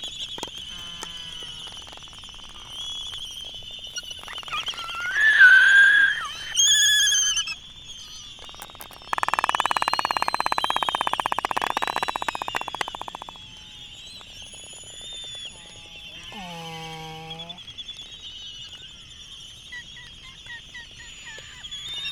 United States Minor Outlying Islands - Laysan albatross dancing ...
Sand Island ... Midway Atoll ... Laysan albatross dancing ... upwards of eight birds involved ... birds leaving and joining ... lavalier mics either side of a fur covered table tennis bat ... think Jecklin disc ... though much smaller ... background noise ... they were really rocking ...
12 March 2012, 19:01